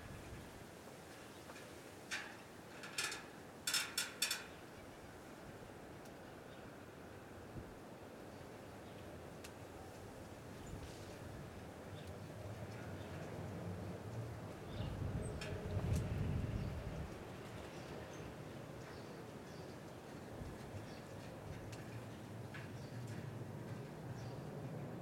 Rue de lEtoile, Uccle, Belgique - finally peace 6
22 March 2020, ~10:00